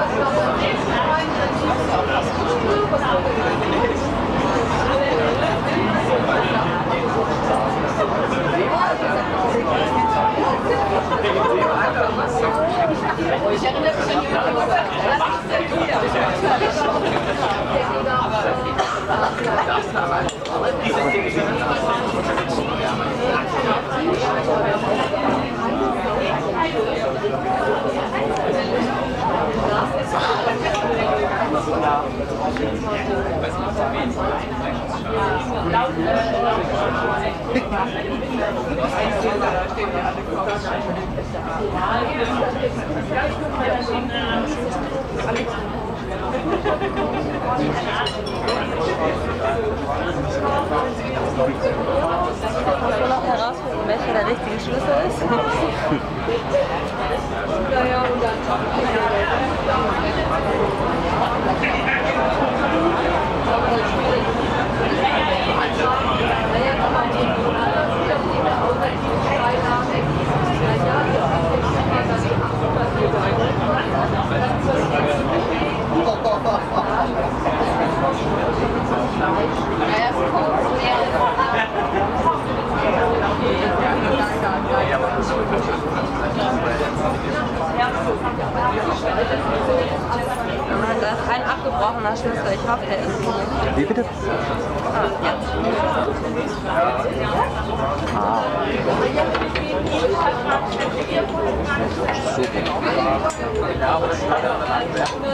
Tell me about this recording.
Muddling ourselves through all the other guests, we finally could catch some places in this open-air-cafe. The we ordered our drinks in this warm summernight.